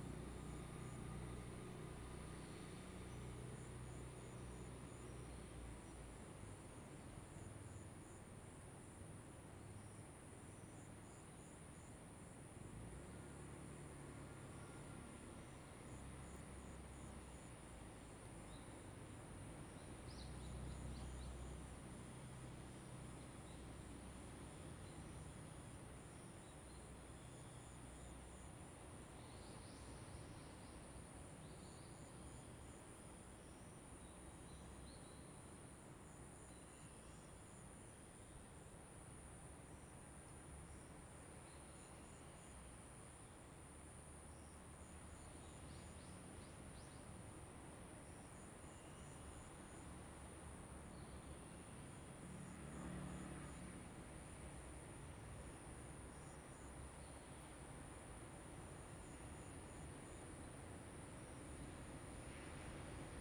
New Life Correction Center, Lüdao Township - Abandoned Prison
Waves, In the Square, Birds singing, Abandoned Prison
Zoom H2n MS +XY
Taitung County, Taiwan, 31 October